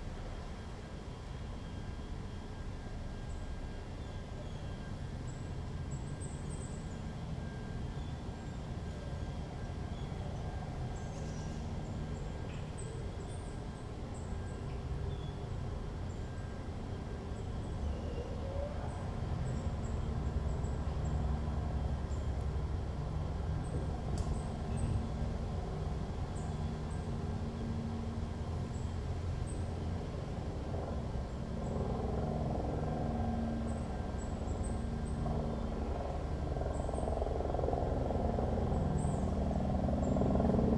Berlin-Friedrichshain, Berlijn, Duitsland - Patio with howling dog
Recorded from the bathroom of a rented apartment. The dog howled and barked all day.
Zoom H2 internal mics.